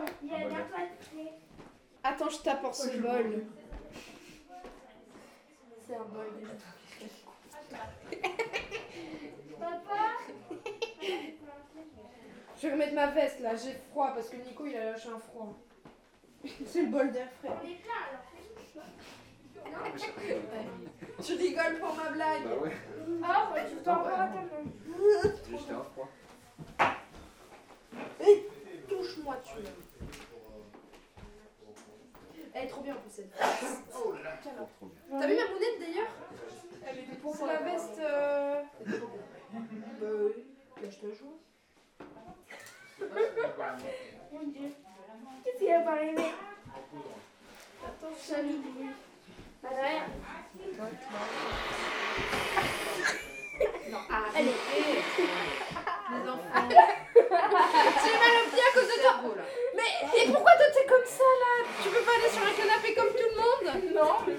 During christmas time in Chadia's home, children are loudly playing.
Lucé, France